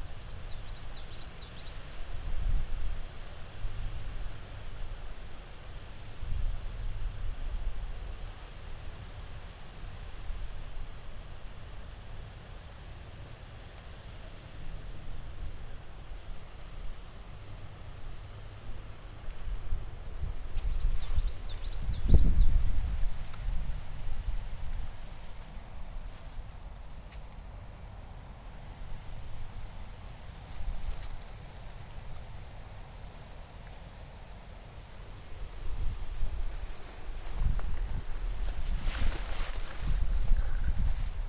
Grass Lake Sanctuary - Western Wetlands
This is the edge of the western wetlands at GLS, an area which people never explore..
Manchester, MI, USA